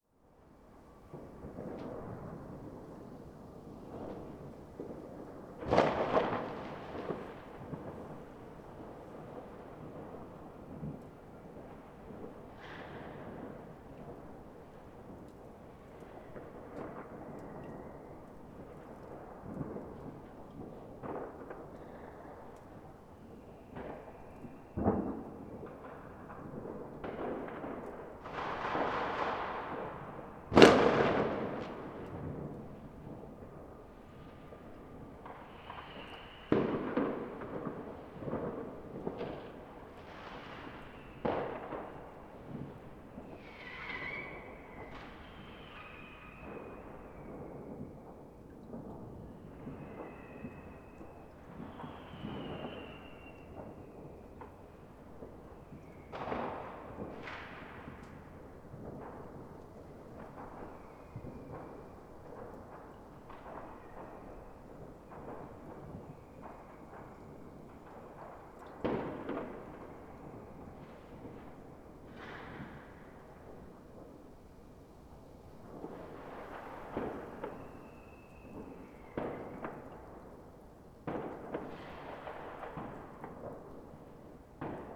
Berlin Bürknerstr., backyard window - new years eve
new years eve, fireworks 30 min before midnight, snowing